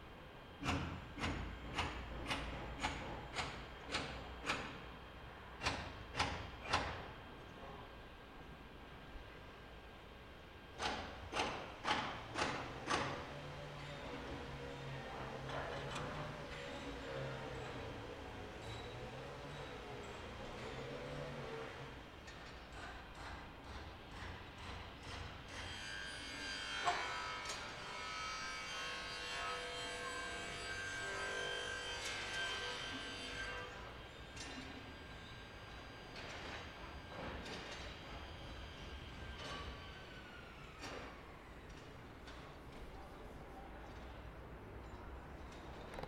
Bachweg, Ischgl, Österreich - Ischgl Dorf
Aussenaufnhame in Ischgl. Baulärm, Fahrzeuggeräusche.
Ischgl, Austria, 3 June 2019